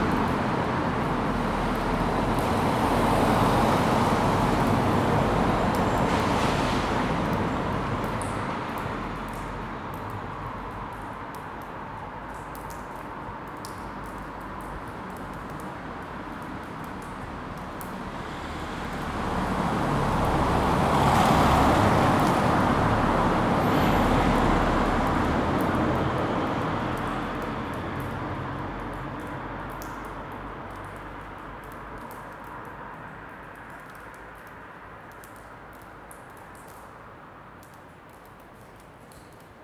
Riserva Naturale Statale Gola del Furlo, Pesaro e Urbino, Italia - Galleria del Furlo
MAR, Italia, 17 March 2013